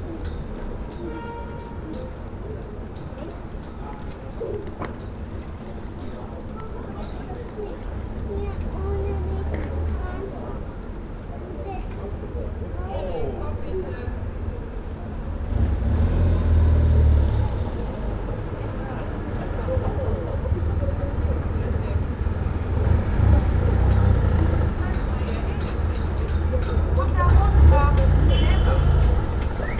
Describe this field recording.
rope of a flagstaff rattles in the wind, drone of a motorcycle, bells of the town hall, the city, the country & me: november 9, 2013